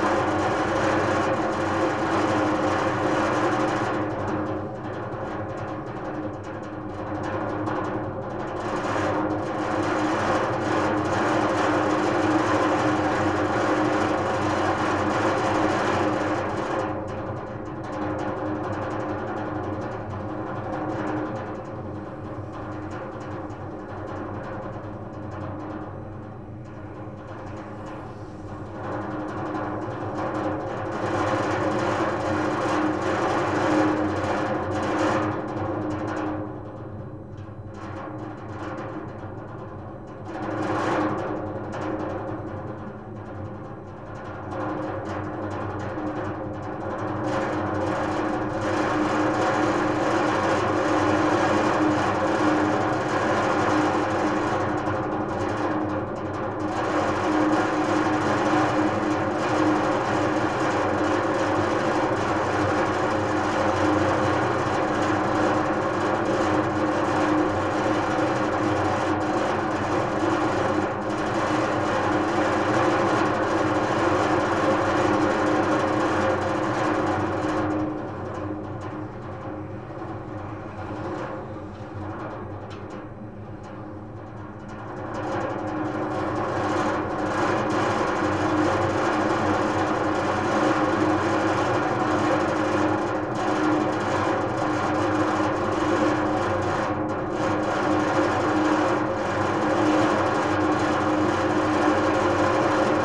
Bd Pierre-Paul Riquet, Toulouse, France - metalic vibration 01
ventilation system metalic vibration
Capatation : ZOOMh4n + AKG C411PP
Occitanie, France métropolitaine, France